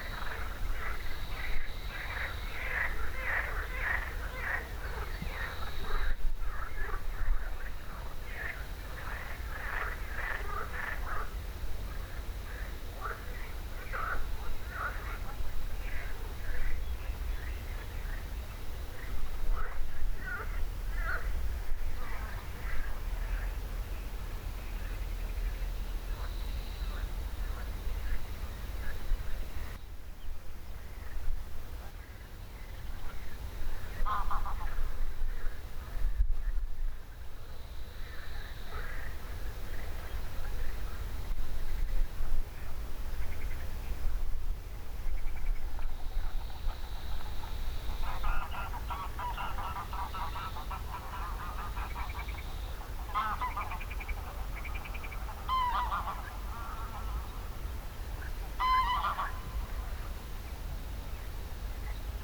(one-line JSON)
{"title": "Richard Jungweg, Rotsterhaule, Nederland - geese and frogs in Easterskar", "date": "2019-08-20 20:10:00", "description": "Easterskar is a protected nature area goverened by it Fryske Gea", "latitude": "52.91", "longitude": "5.88", "altitude": "1", "timezone": "Europe/Amsterdam"}